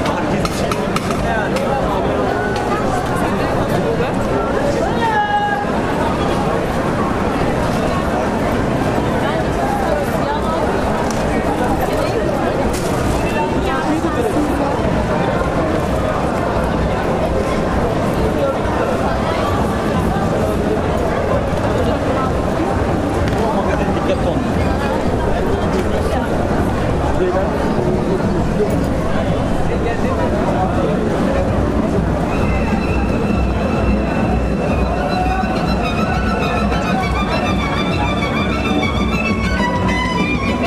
Istanbul, Istiklal caddesi at night
Istiklal Caddesi, the street of the many manias. Whatever you do expect, its there. If there is such a thing as the aesthetics of the crowds, it comes to a climax in this place during the night. The result is a sonic conundrum. The recording was made walking down the street for approximately 500 meters.
17 September, 22:28